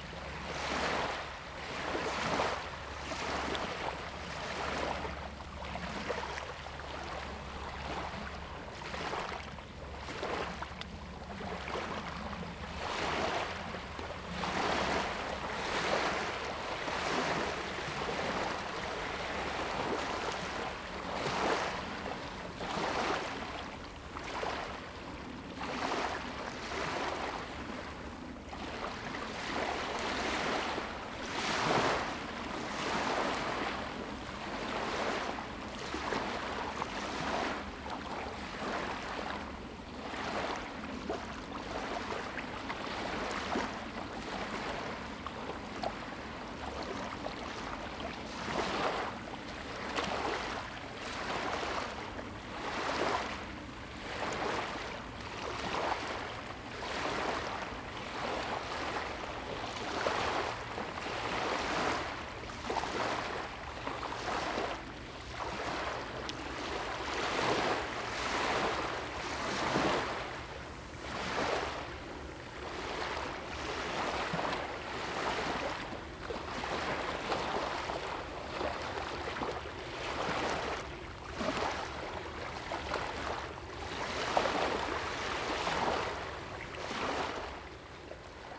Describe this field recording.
At the beach, Sound of the waves, Zoom H2n MS+XY